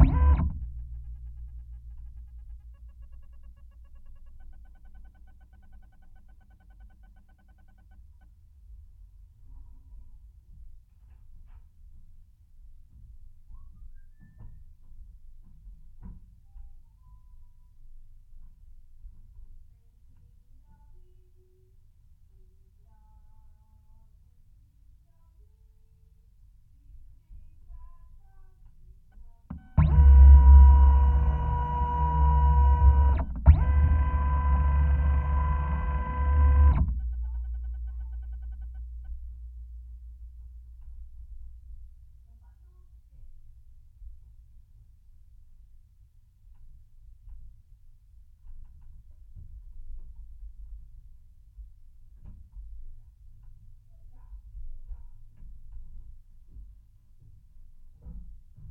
East Austin, Austin, TX, USA - Scanning Contact Mics
Scanning a pair of JrF contact mics in an Epson V600 scanner. Recorded into a Marantz PMD 661.